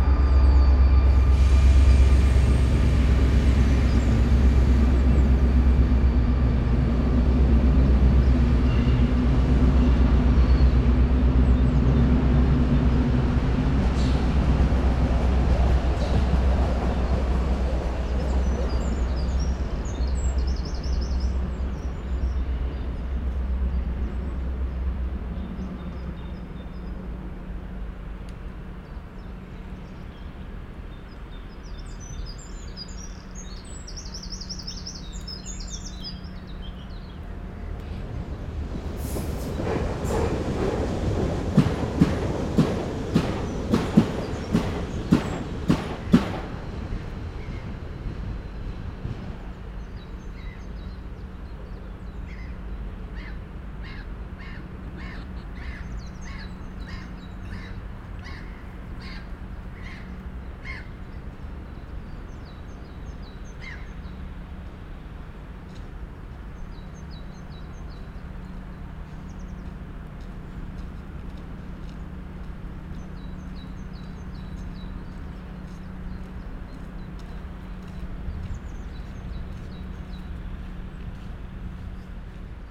Sunny ambiance into the park, and a fast pace of trains passing in the station of Østerport.
København, Denmark, April 2019